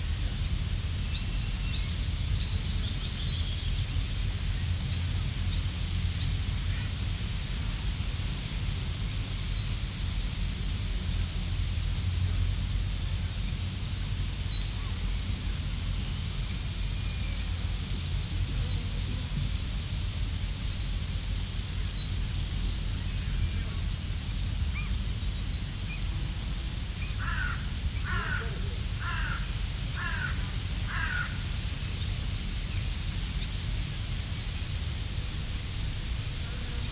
Värati, Estonia - grasshoppers, some voices of people, passing car on gravel road, dog
grasshoppers, people, car, gravel road, dog, bicycle